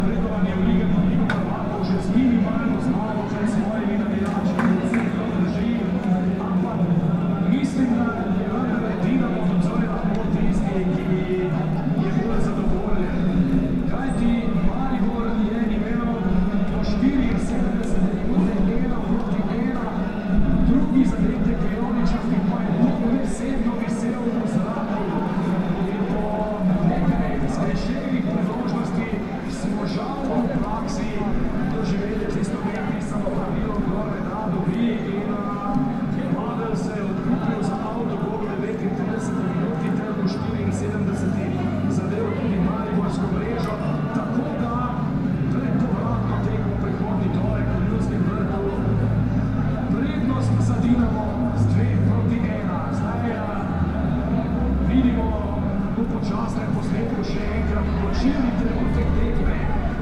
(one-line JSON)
{"title": "Grajski trg, Maribor, Slovenia - corners for one minute", "date": "2012-08-22 22:38:00", "description": "one minute for this corner: Grajski trg 5", "latitude": "46.56", "longitude": "15.65", "altitude": "275", "timezone": "Europe/Ljubljana"}